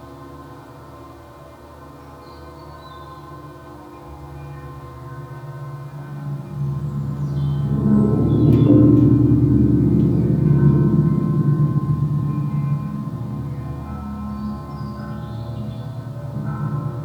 Experimental Microphone, Malvern, Worcestershire, UK - Plate Mic
I attached a cheap piezo contact mic to a 2mm thick plate 300mm square and hung it in the wind.
MixPre 3
2 April, 16:55